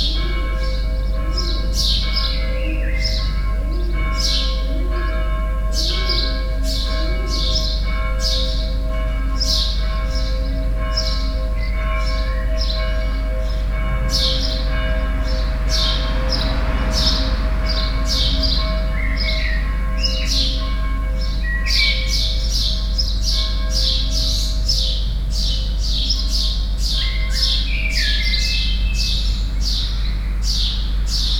Cahors, Rue du Portail Alban.
Birds and Bells, a few cars.